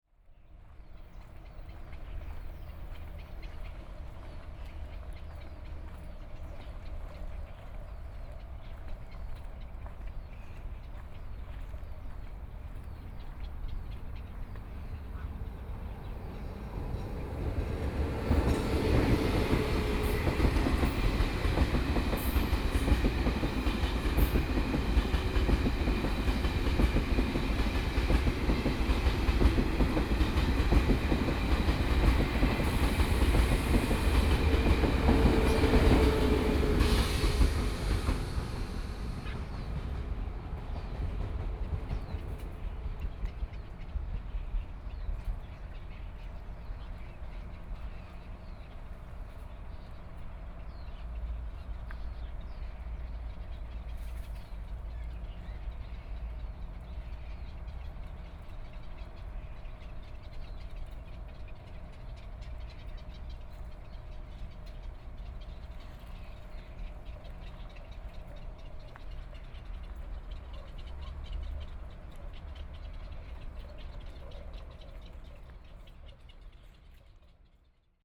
羅東林業文化園區, 羅東鎮信義里 - walking in the Park
Air conditioning noise, Trains traveling through, Beside railroad tracks